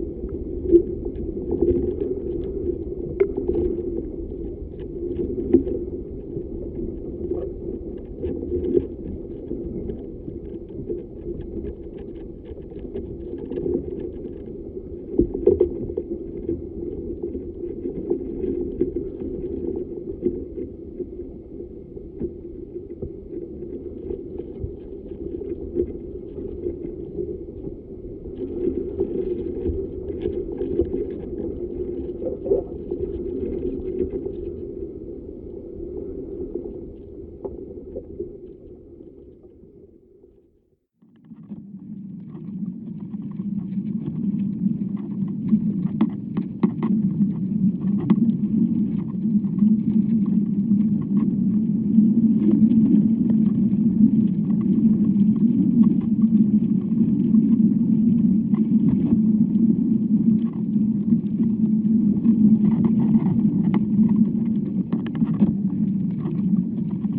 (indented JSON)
{
  "title": "Emmerthal, Germany SOUNDS FROM THE TREE (Bio Acoustics Of Plants) - SOUNDS FROM THE TREE (Bio Acoustics Of Plants)",
  "date": "2021-01-31 13:25:00",
  "description": "Recording sounds from the inside of the tree. That days it was q quite windy, so some external noises from the wind on the mic are heard in the recording file.\nTASCAM DR100-MKIII\nMicrophone For Earthquakes & Infra Sonic Frequencies",
  "latitude": "52.07",
  "longitude": "9.35",
  "altitude": "103",
  "timezone": "Europe/Berlin"
}